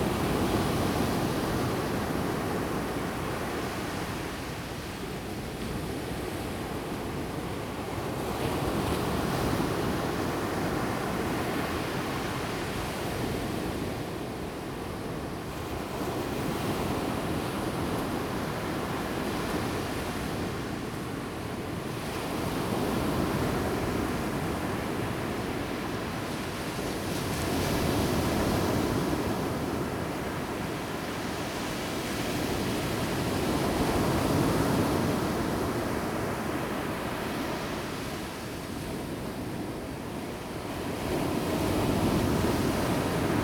頭城鎮竹安里, Toucheng Township, Yilan County - sound of the waves
Sound of the waves, On the beach
Zoom H2n MS+XY